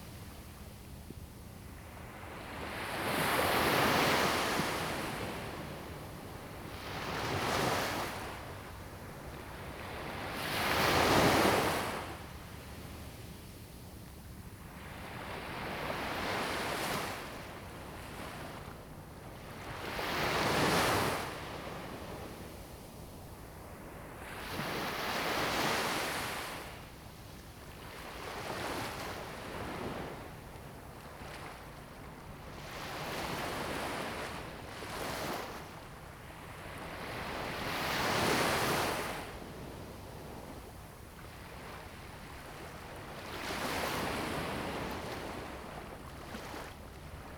In the beach, Sound of the waves
Zoom H2n MS +XY